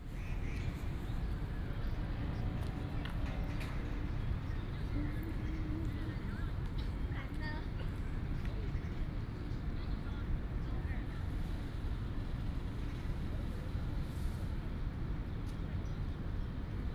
Drunken Moon Lake, National Taiwan University - Sitting on the lake
At the university, Sitting on the lake, Footsteps, Bird sounds
Taipei City, Taiwan, 4 March 2016